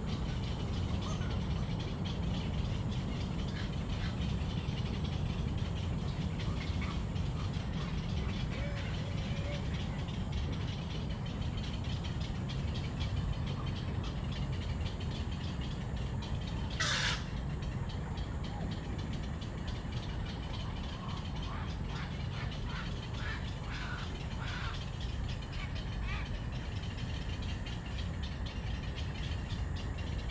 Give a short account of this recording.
Bird calls, in the Park, Traffic noise, Zoom H2n MS+XY